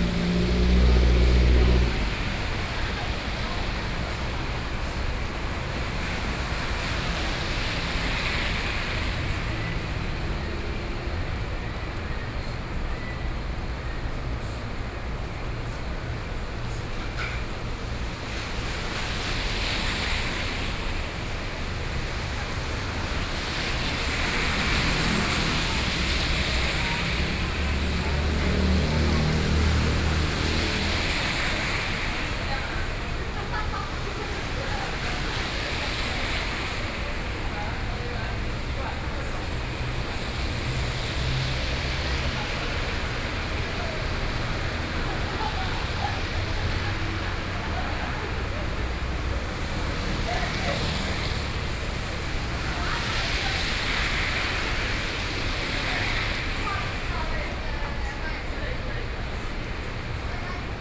Yangmei - rainy day
Standing on the roadside, In front of the beverage shop, Traffic Noise, Sony PCM D50 + Soundman OKM II